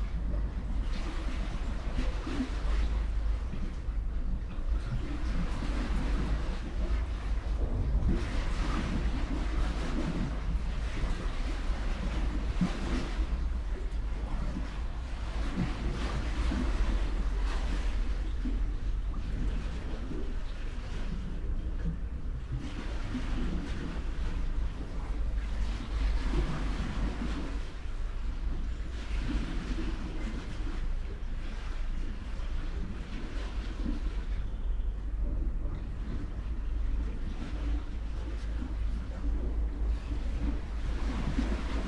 Rovinj, Croatia - birds
narrow staircase to the sea, an old man passing by, black birds flying over roofs, waves ...
29 December 2012